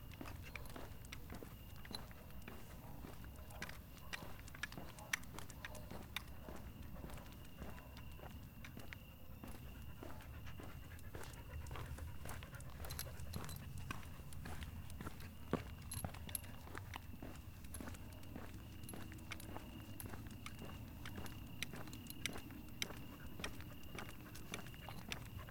R. do Monte da Poça, Portugal - sound walking the dog
Braga, Portugal, 9 August 2022, 21:40